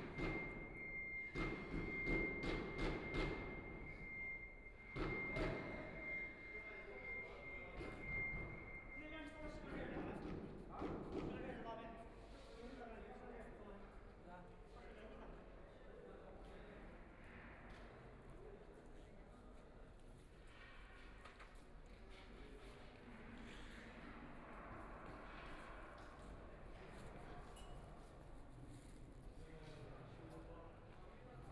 Huangpu District, Shanghai - Construction
Construction workers are arranged exhibition, Standing on the third floor hall museum, The museum exhibition is arranged, Binaural recording, Zoom H6+ Soundman OKM II
Shanghai, China, 28 November, 14:06